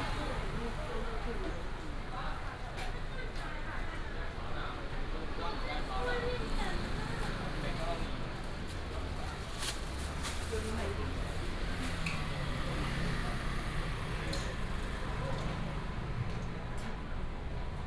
Sec., Dihua St., Datong Dist., Taipei City - walking in the Street
Traditional street, Traffic sound
Datong District, Taipei City, Taiwan